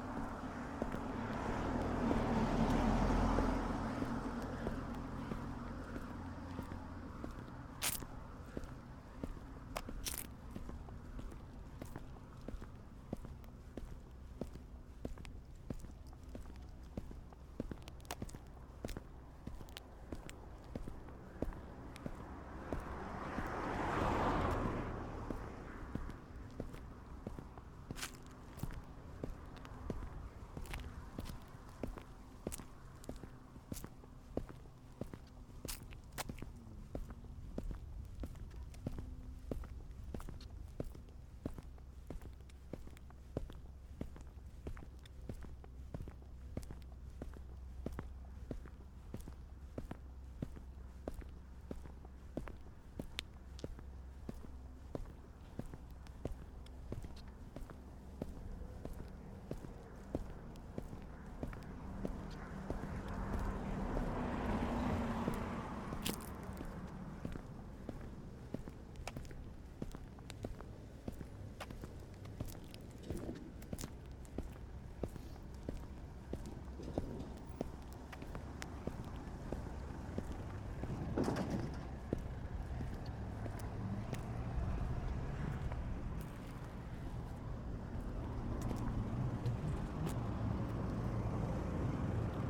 Skov, Tårngade, Struer, Denmark - Dry leaves on Tårngade, Struer (left side of street) 1 of 2
Start: Søndergade/Tårngade
End: Tårngade/Ringgade